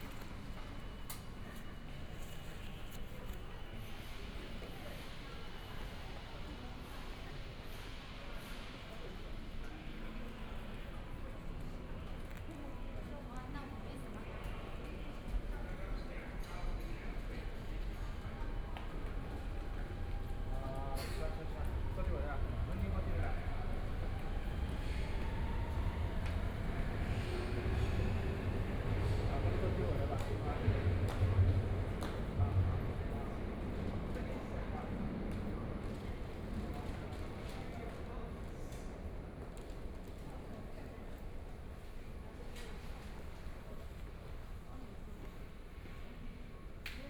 Yangpu District, Shanghai - soundwalk
From the building to the subway station, Went underground platforms, Binaural recording, Zoom H6+ Soundman OKM II
Yangpu, Shanghai, China, 21 November 2013